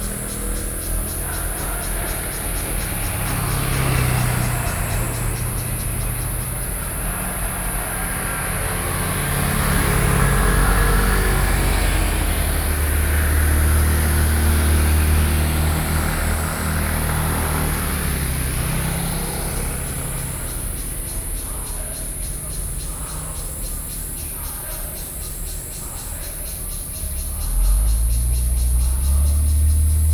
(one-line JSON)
{"title": "wugu, New Taipei City - Soldiers running cries", "date": "2012-07-03 17:40:00", "latitude": "25.08", "longitude": "121.43", "altitude": "55", "timezone": "Asia/Taipei"}